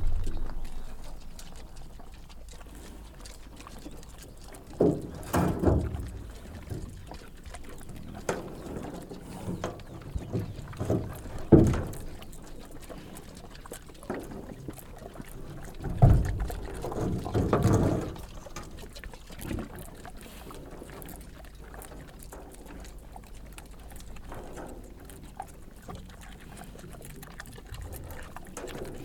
Woodbridge, UK - pigs chewing stones
sows reared outdoors on an industrial scale in bare sandy soil continually, audibly chewing on stones which they drop and play with in their empty metal troughs; abnormal behaviour expressing frustration with nothing to forage, a way of managing stress and coping with a poor diet.
Marantz PMD620
England, United Kingdom, 2 May 2022, 17:28